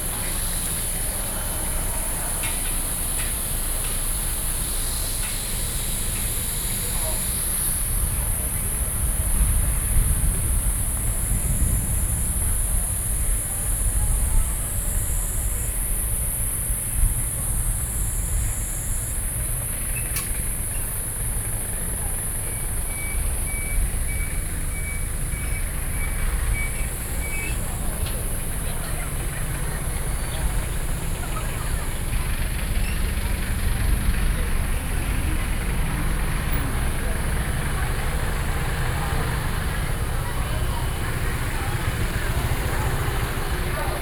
Fenglin Road, Shimen Dist., New Taipei City - Small fishing village
New Taipei City, Taiwan, 25 June 2012